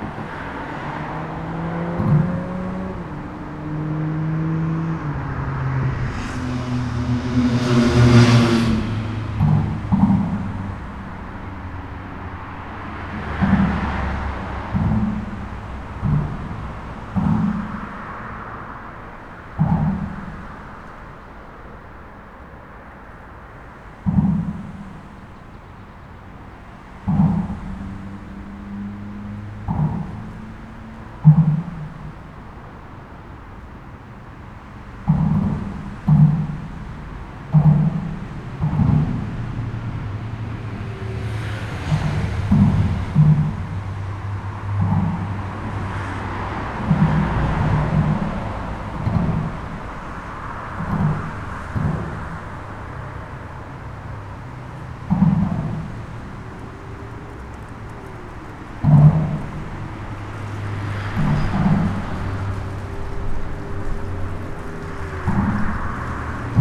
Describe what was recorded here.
the city, the country & me: may 8, 2011